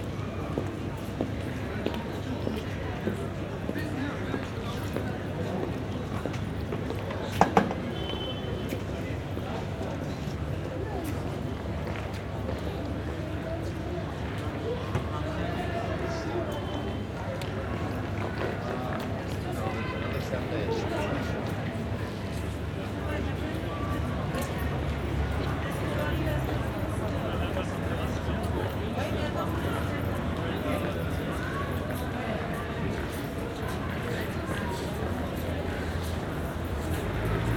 Istanbul Soundscape, Sunday 13:05 Galata Tower
Istanbul ambient soundscape on a Sunday afternoon at the Galata Tower plaza, binaural recording